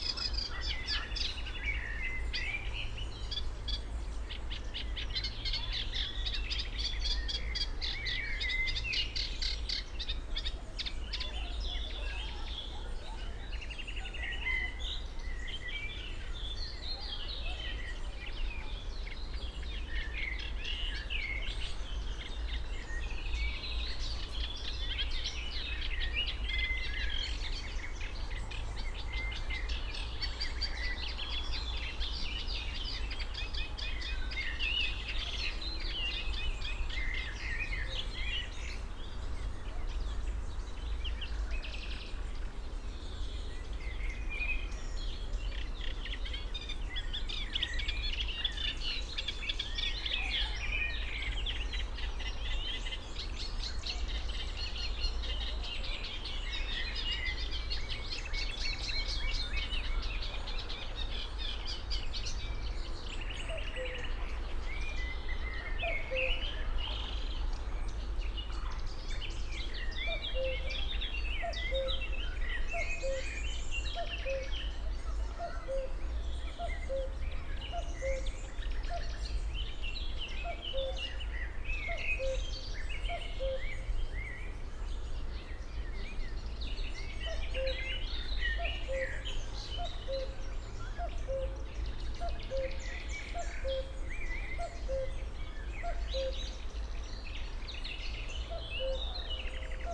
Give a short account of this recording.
Reed warblers (Drosselrohrsänger) and Cuckoo (Kuckuck) south of Wuhle pond, sound aspects of a small Berlin inner-city river, (Sony PCM D50, Primo EM172)